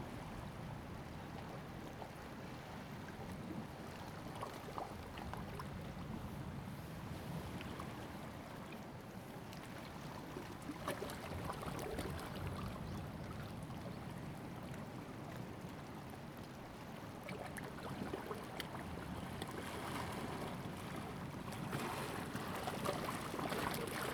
八代灣, Koto island - Tide and Wave
Hiding inside Rocks, Tide and Wave
Zoom H2n MS+XY
Lanyu Township, Taitung County, Taiwan, October 2014